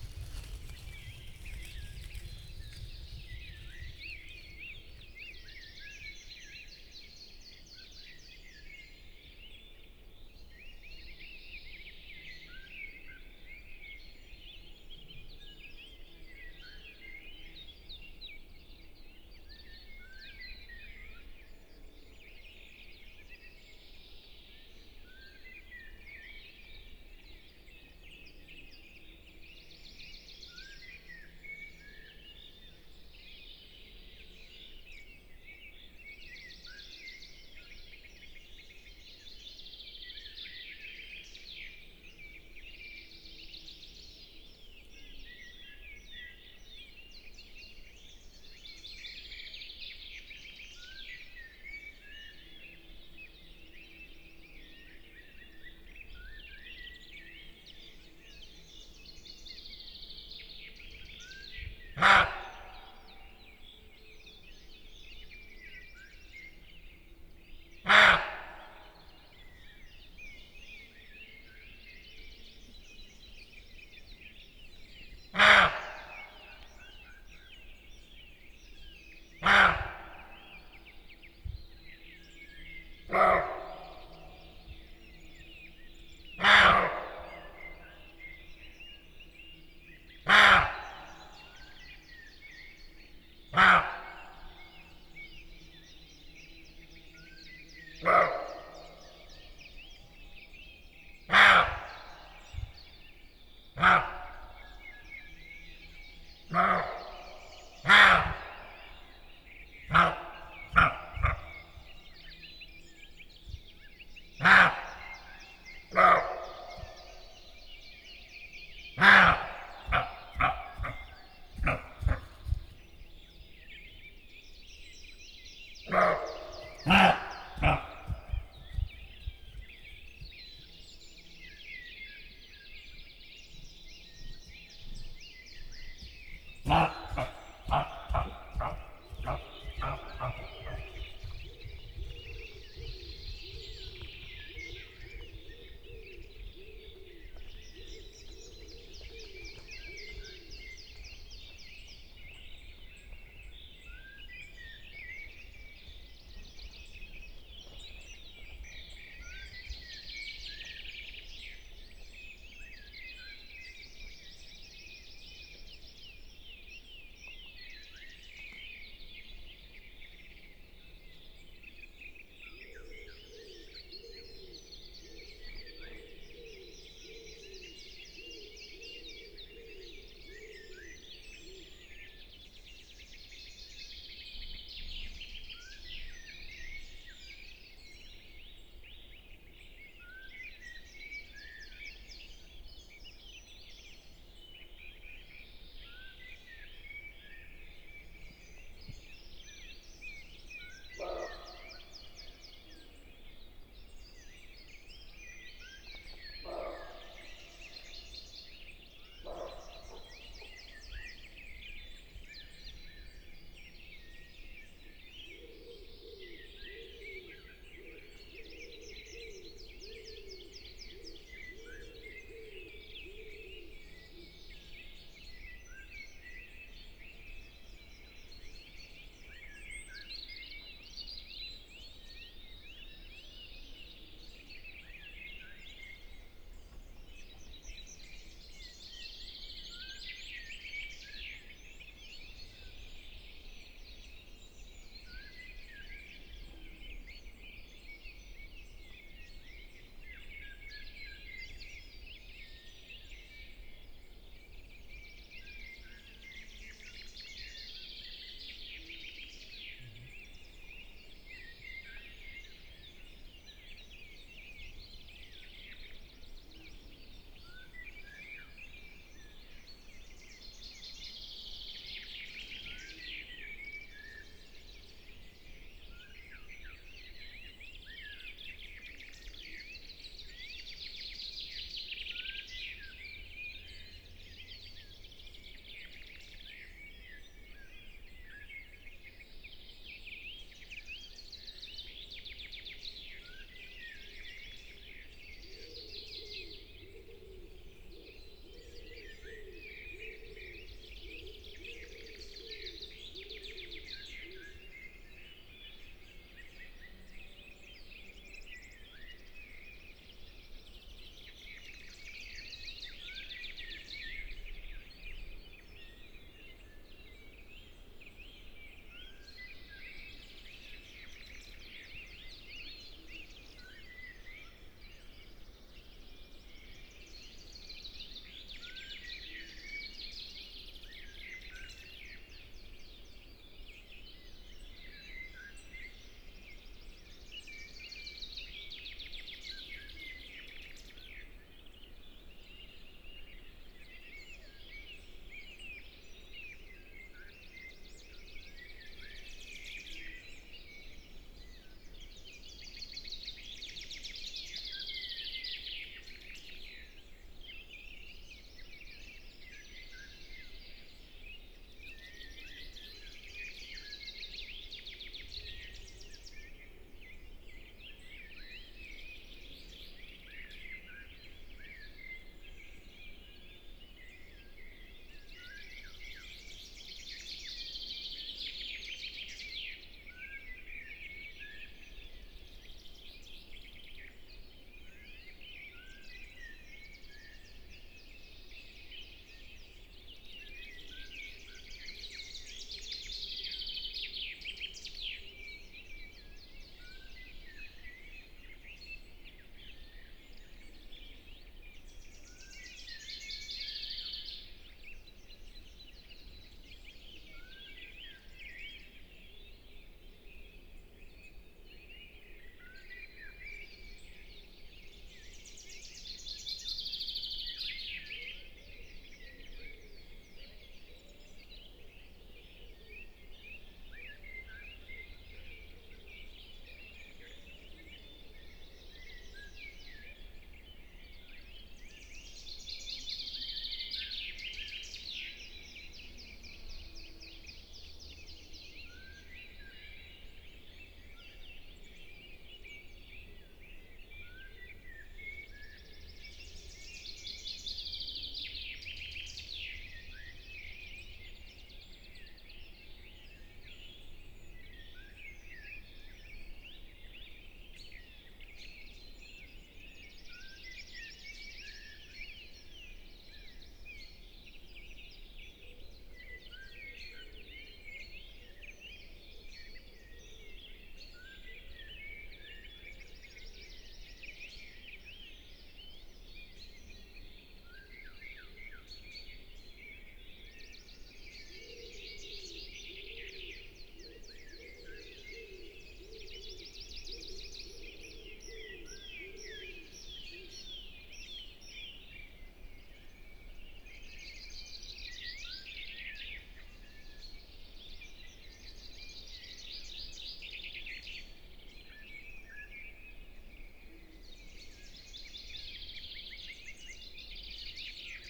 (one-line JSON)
{"title": "Bišar, Slovakia - Nature reserve Bišar, Slovakia: Morning Birds and Roe Deer", "date": "2018-05-20 05:17:00", "description": "Bišar is a nature reserve in eastern Slovakia located in hills near Tichý Potok village. It is protected for its mountain meadows with specific flora. It is also free from man-made noise. Surrounding forests are interesting for their wildlife. This is part of a dawn chorus recording with roe deer going by.", "latitude": "49.11", "longitude": "20.80", "altitude": "901", "timezone": "Europe/Bratislava"}